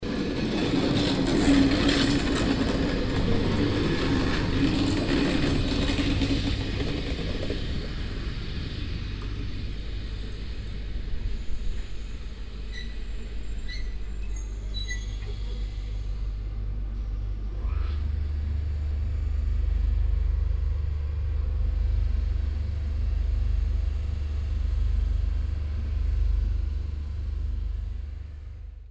kautenbach, station, train passing by
At the terminal station. A train passing by. The harsh sounds of the railroad track and the trains wheels.
Kautenbach, Bahnhof, Zug fährt vorbei
Am Bahnhof. Ein Zug fährt vorbei. Das harte Geräusch der Schienen und die Signalpfeife des Zuges.
Kautenbach, gare, train traversant
À la gare. Un train passe. Le dur bruit des rails et le klaxon du train.
Project - Klangraum Our - topographic field recordings, sound objects and social ambiences
Kautenbach, Luxembourg